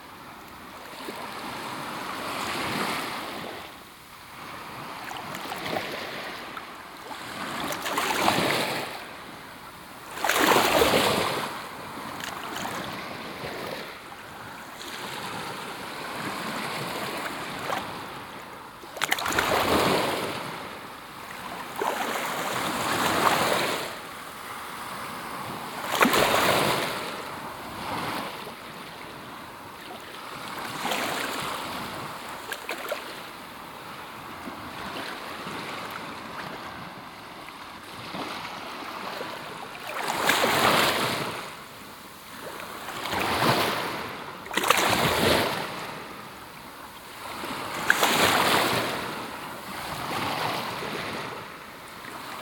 {
  "title": "Waves at Playa Muchavista, Alicante, Hiszpania - (12) BI Waves, really close",
  "date": "2016-11-04 16:08:00",
  "description": "Binaural recording of waves, while sitting in the water.\nZoomH2, Soundman OKM",
  "latitude": "38.42",
  "longitude": "-0.39",
  "timezone": "Europe/Madrid"
}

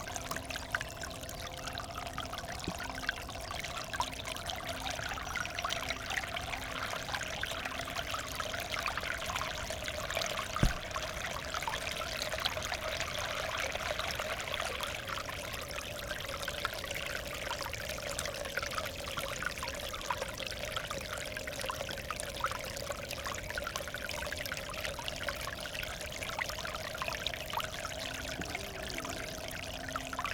{
  "title": "Beselich Niedertiefenbach, Ton - source of a little creek",
  "date": "2016-03-28 18:00:00",
  "description": "outflow of a forest pond, source of a little creek (and some memories...) place revisited.\n(Sony PCM D50)",
  "latitude": "50.45",
  "longitude": "8.15",
  "altitude": "252",
  "timezone": "Europe/Berlin"
}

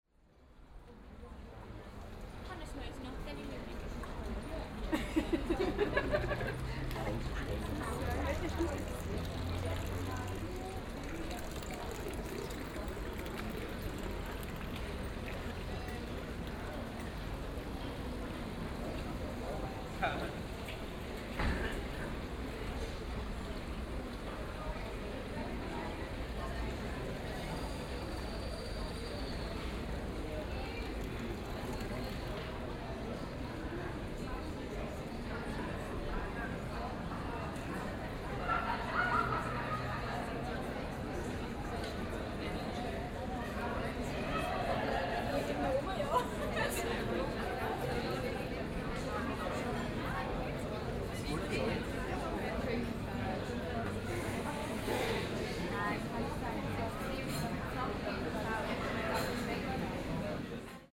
{
  "title": "Aarau, Am Zollrain, Evening - Zollrain2",
  "date": "2016-06-28 18:37:00",
  "description": "A well, voices, continuation of an evening stroll",
  "latitude": "47.39",
  "longitude": "8.04",
  "altitude": "385",
  "timezone": "Europe/Zurich"
}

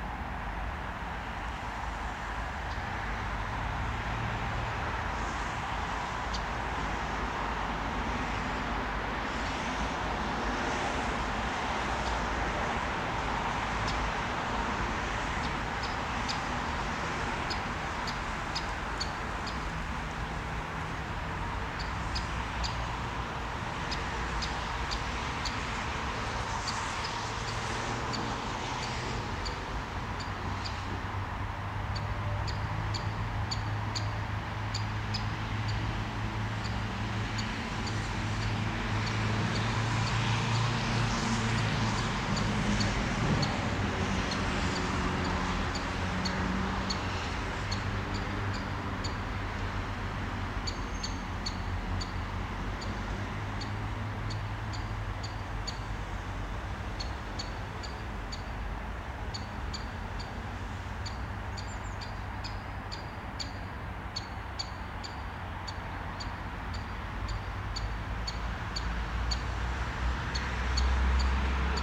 {
  "title": "Vilnius, Lithuania, Rasos cemetery",
  "date": "2020-10-17 15:10:00",
  "description": "Vilnius city soundscape from the grave of greatest lithuanian composer M. K Ciurlionis",
  "latitude": "54.67",
  "longitude": "25.30",
  "altitude": "160",
  "timezone": "Europe/Vilnius"
}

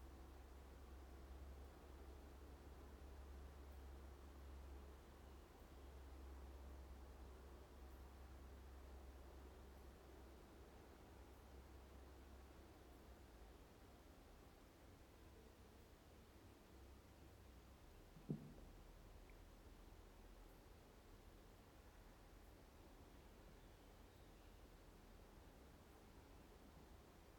{
  "title": "Kintai, Lithuania, abandoned camp",
  "date": "2022-07-21 11:20:00",
  "description": "Abandoned camp. The building with dining-room. Scaterred trash, broken windows...",
  "latitude": "55.42",
  "longitude": "21.26",
  "altitude": "8",
  "timezone": "Europe/Vilnius"
}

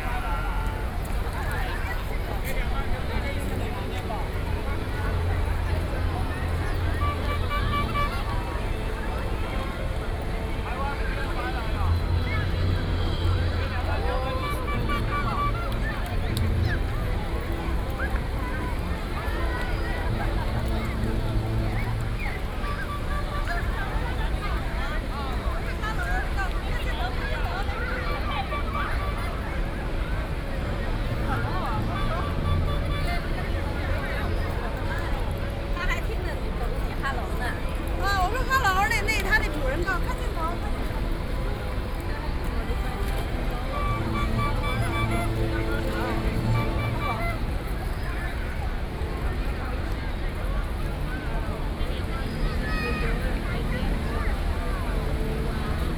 {
  "title": "National Dr. Sun Yat-sen Memorial Hall, Taipei City - People in the square",
  "date": "2012-11-04 16:14:00",
  "latitude": "25.04",
  "longitude": "121.56",
  "altitude": "11",
  "timezone": "Asia/Taipei"
}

